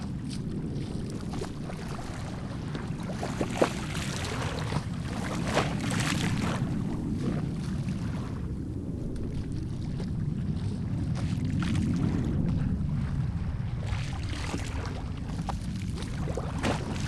鎮海村, Baisha Township - On the bank
On the bank, Waves and tides, Aircraft flying through
Zoom H6 + Rode NT4